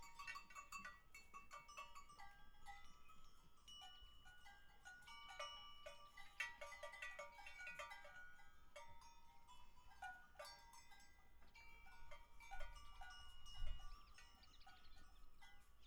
Ein Tag später zur gleichen Zeit: Die Ziegen ziehen allmählich weiter.
Patmos, Greece, 6 October 2004, ~17:00